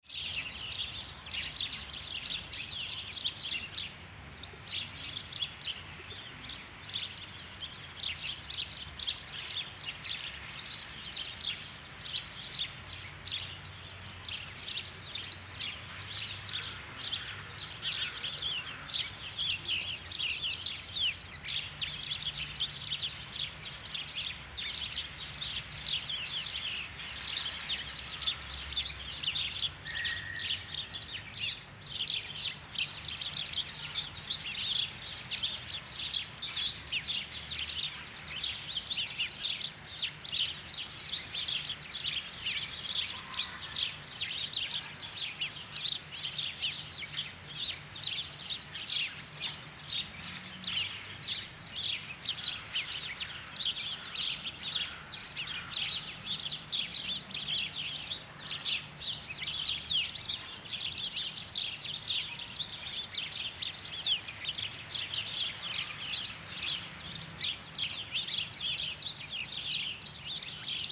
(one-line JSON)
{"title": "Russia, Severodvinsk - Sparrows in the city", "date": "2012-03-18 12:33:00", "description": "Sparrows in the city, spring.\nВоробьи в городе весной.", "latitude": "64.54", "longitude": "39.78", "altitude": "7", "timezone": "Europe/Moscow"}